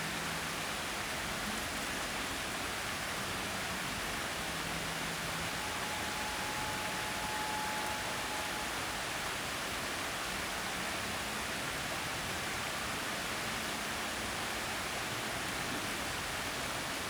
Hiddenseer Str., Berlin, Germany - Summer Thunder Storm, 55min - with baby, drums, magpie
Warm, unsettled weather. This is almost one continuous recording but a prologue and epilogue have been added to give a rounder picture of the storm's effect on Hinterhof life. The prologue - 0'00"/1'57" with baby and thunder - occurred about 10min before the rain started and the epilogue - 53'02"/55'23" with magpie and water drips - took place about 50min after it had finished. In between it's one take. The loudest thunder clap at 42'04" - much closer than all the rest - is heavily overloads the original recording. For this upload I've reduced its level. The distortion is still there but less obvious and doing this means that the rest of the recording can be brought up to a more consistent level.